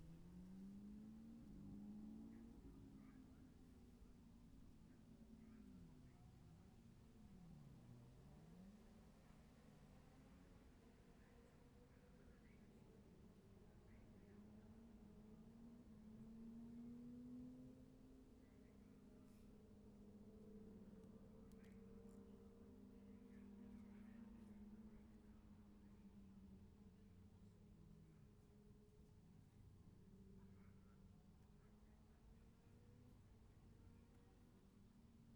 Gold Cup 2020 ... 600 evens qualifying ... dpas bag MixPre3 ... Memorial out ... red-flagged ...
Scarborough, UK, 11 September